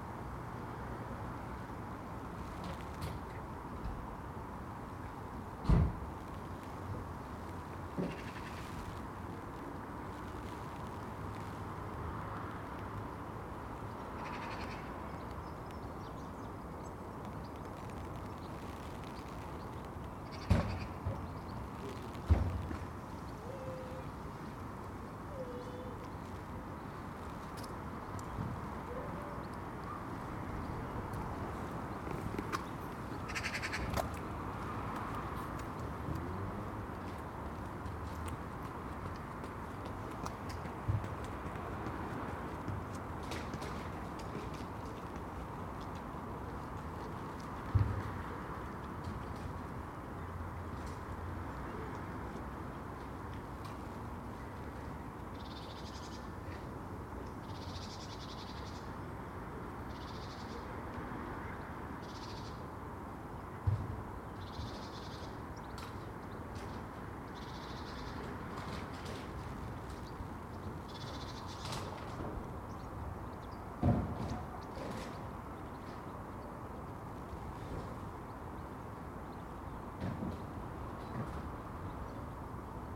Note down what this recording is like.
The Drive Westfield Drive Parker Avenue Brackenfield Road Meadowfield Road Brierfield Road, At a crossroads, pigeons surf the gusting wind, spilling across the skyline, Rooftop perched, pigeons, magpie, ariels substitute for tree tops